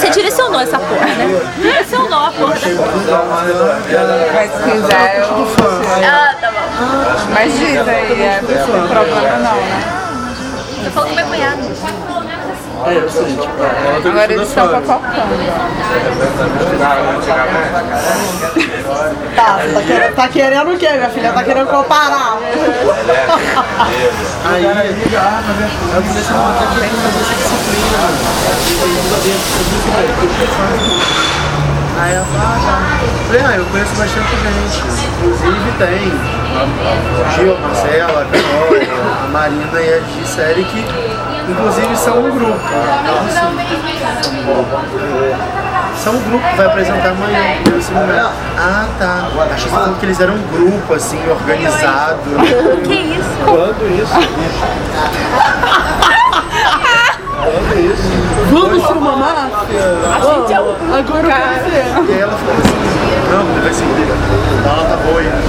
Ingá, Niterói - Rio de Janeiro, Brazil - Glauber's Bar
End of meeting in the Glauber's bar.
- Boa Viagem, Niterói - Rio de Janeiro, Brazil, 5 November 2012, ~18:00